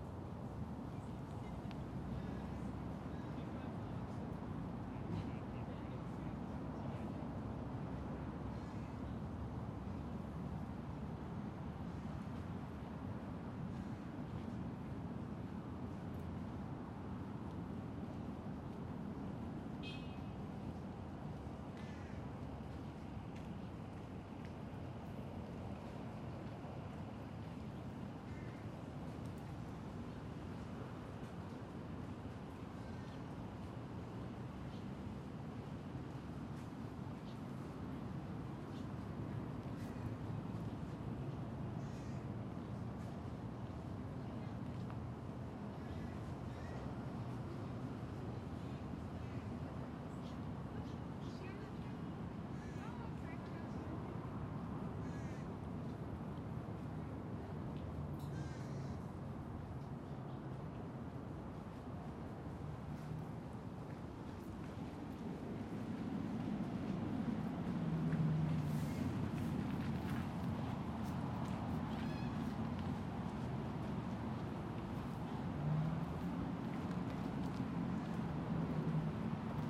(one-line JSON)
{"title": "Greenlake Park, Seattle Washington", "date": "2010-07-18 12:15:00", "description": "Part twoof a soundwalk on July 18th, 2010 for World Listening Day in Greenlake Park in Seattle Washington.", "latitude": "47.67", "longitude": "-122.34", "altitude": "53", "timezone": "America/Los_Angeles"}